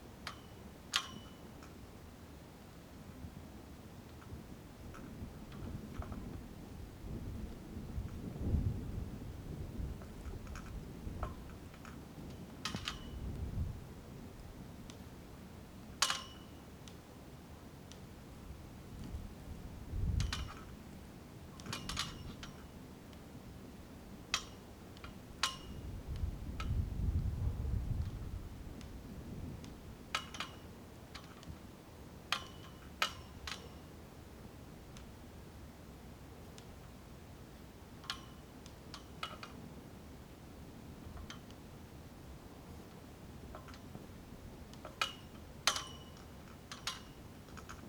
Niedertiefenbach, Beselich - flag
quite village, winter night, a flag in the low wind
(Sony PCM D50)
Beselich, Germany, 19 December 2012